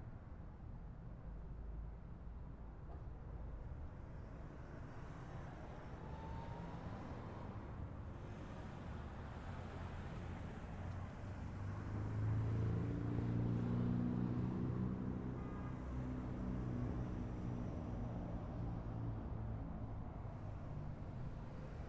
East Elmhurst, Queens, NY, USA - Sitting Underneath The LaGuardia Airport Welcome Sign
Traffic intersection at the entrance to LaGuardia Airport
3 March 2017, 14:30